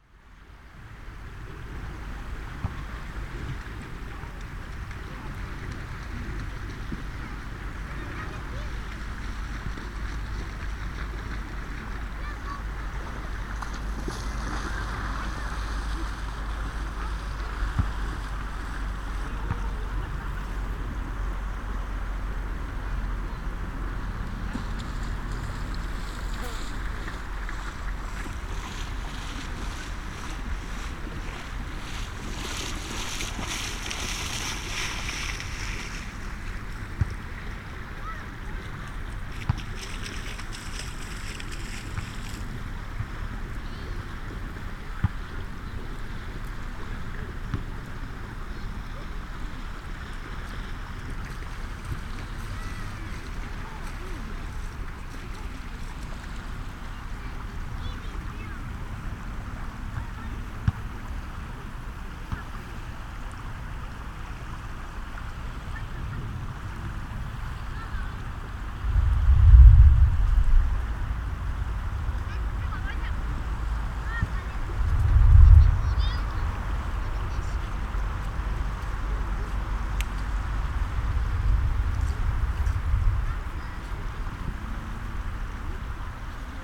sea, people and thunder, Estonia
people swim as a thunder storm rages out at sea